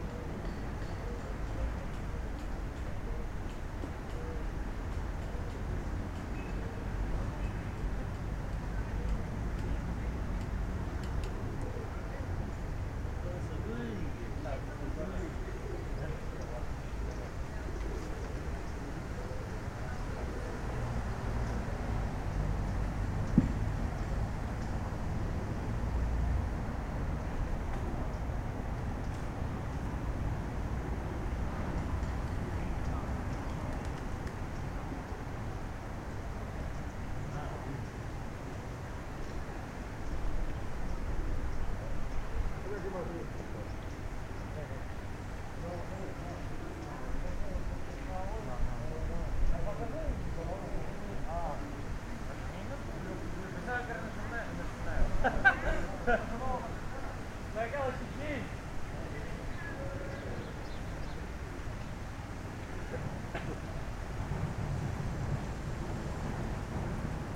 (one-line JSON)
{"title": "R. Direita, Angra do Heroísmo, Portugal - Jardim Duque da Terceira", "date": "2019-11-08 09:56:00", "description": "These recordings are part of the Linschoten Workshop, a work done with the students of the Francisco Drummond school of eighth year.\nA sound landscape workshop with which a mapping has been made walking the city of Angra do Heroísmo, a world heritage site, through the Linschoten map, a map of the XVi century, which draws the Renaissance city. With the field recordings an experimental concert of sound landscapes was held for the commemorations of UNESCO. 2019. The tour visits the city center of Angra. Jardim Duque da Terceira, Praça Velha, Rua Direita, Rua São João, Alfandega, Prainha, Clube Náutico, Igreja da Sé, Igreja dos Sinos, Praça Alto das Covas, Mercado do Duque de Bragança-Peixeria.\nRecorded with Zoom Hn4pro.\n*The garden workers talking.", "latitude": "38.66", "longitude": "-27.22", "altitude": "28", "timezone": "Atlantic/Azores"}